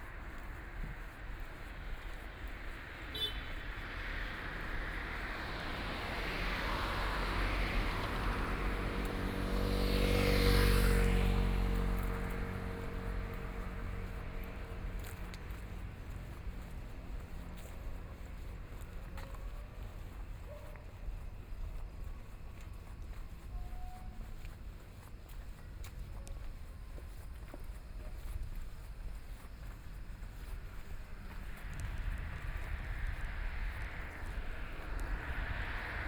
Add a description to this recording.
Night walk in the streets of the town, Traffic Sound, Dogs barking, Binaural recordings, Zoom H6+ Soundman OKM II